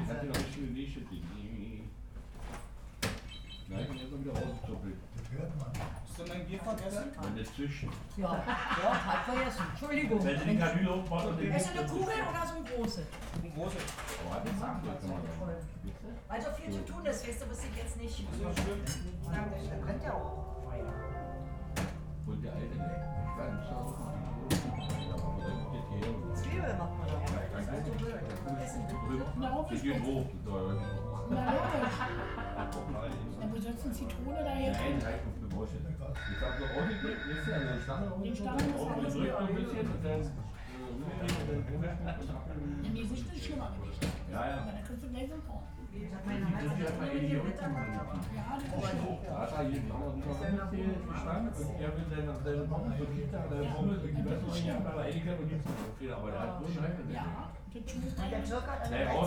berlin, gropiusstadt, wildmeisterdamm - ideal pavillion
pub ambience early afternoon